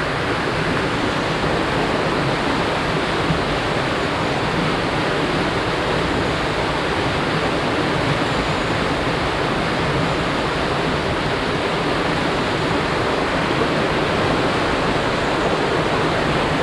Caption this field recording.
wasserlauf der anger, wasserantrieb des cromford museums, - soundmap nrw, project: social ambiences/ listen to the people - in & outdoor nearfield recordings